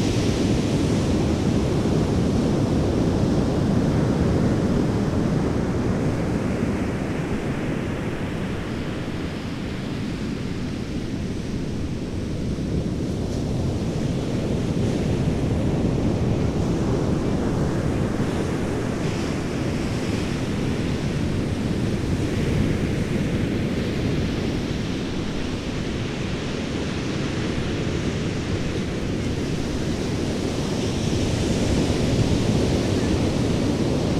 thick and rich surf sounds at Stinson beach California
California, United States of America